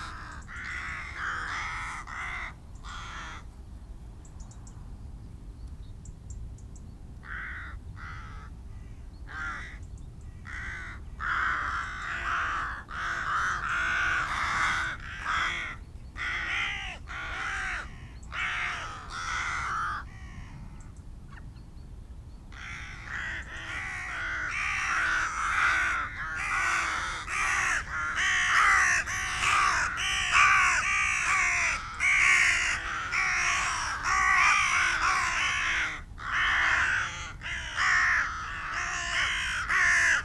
Green Ln, Malton, UK - A gathering of rooks and crows ...
A gathering of rooks and crows ... open lavalier mics clipped to sandwich box ... on the edge of a ploughed field ...
16 October, 07:30